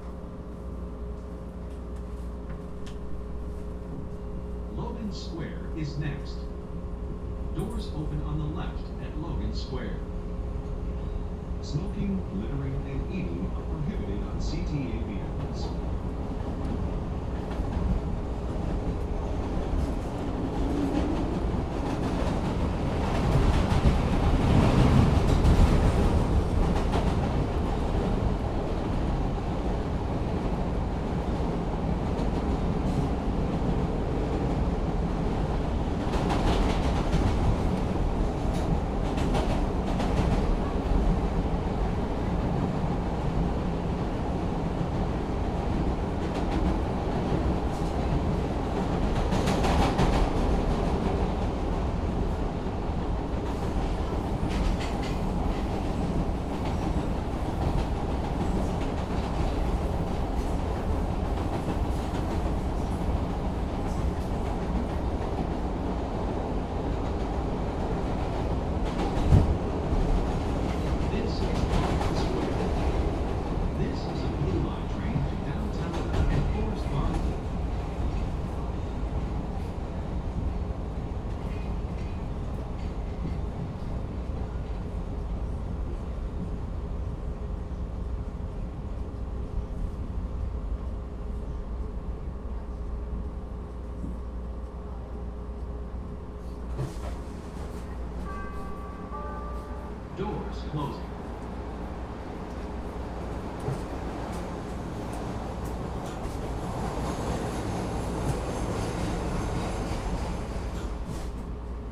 Logan Square, Chicago, IL, USA - CTA Blue Line train from Belmont to Western
Part of my morning commute on a Blue Line train beginning underground at Belmont CTA station, through the above-ground, elevated station at Western.
Tascam DR-40.
August 2012